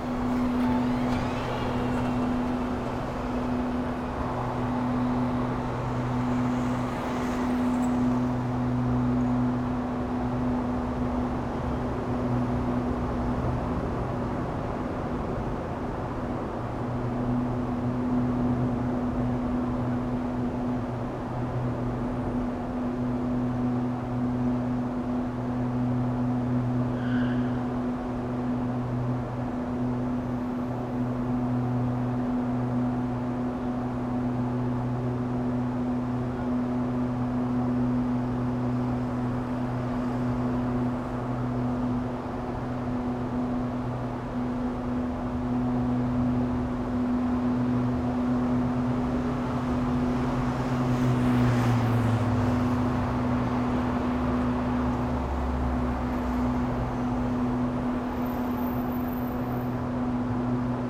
lisbon, av. da liberdade
exhaus of the subway at av. da liberdade. very noisy place.
Lisbon, Portugal, 30 June